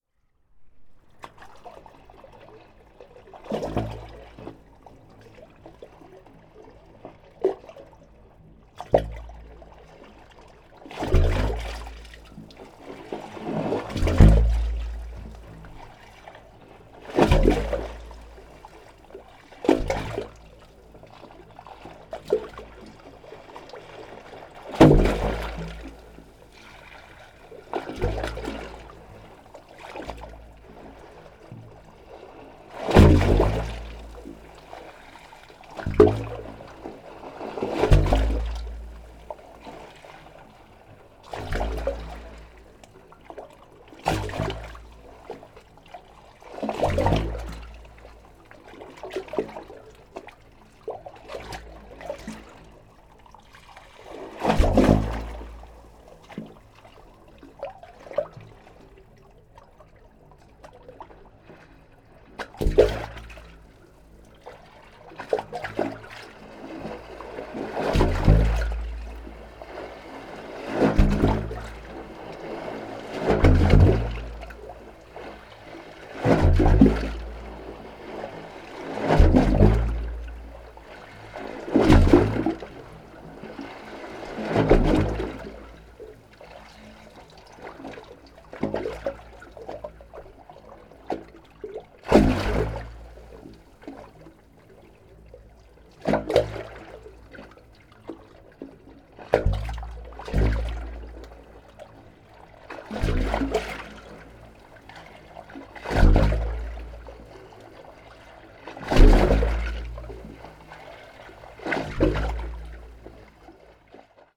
Novigrad, Croatia - square hole
sounds of sea from inside of the square hole
14 July 2013